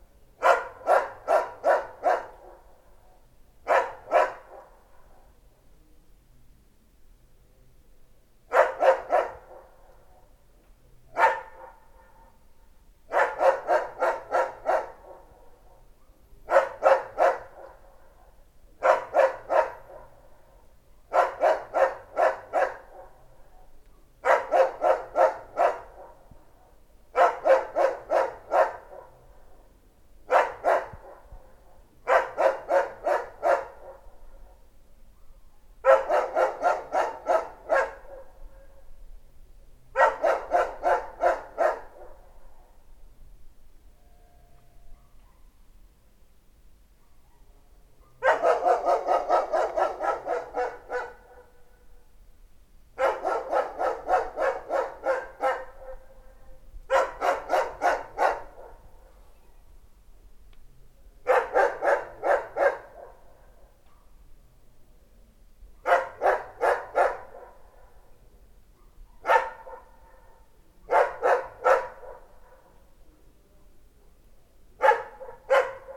2016-08-01-2h02 du matin: un chien hurle, en continu, durant des heures, chaque nuit, c'est une chienne berger allemand.
C'est marrant non? à forte dose c'est un des problèmes sanitaires majeurs de la Réunion (en plus des hélicos le matin)
1 August, ~02:00